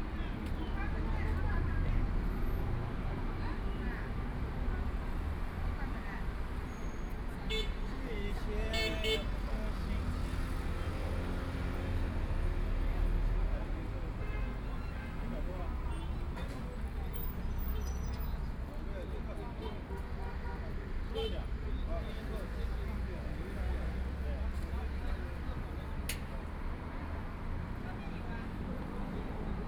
Renmin Rd., Shanghai - in the corner
Sitting in the corner, Traffic Sound, Near the old settlements, Residents voice conversation, Binaural recording, Zoom H6+ Soundman OKM II
Huangpu, Shanghai, China